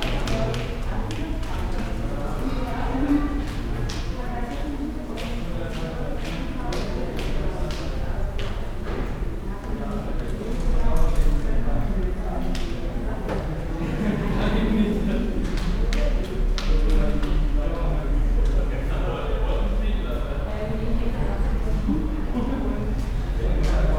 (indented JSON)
{
  "title": "city library, Kleistforum, Hamm, Germany - library hum Friday eve",
  "date": "2015-06-12 17:55:00",
  "description": "hum of the building with its open staircase; steps and voices over 3 floors; bus station roaring outside",
  "latitude": "51.68",
  "longitude": "7.81",
  "altitude": "66",
  "timezone": "Europe/Berlin"
}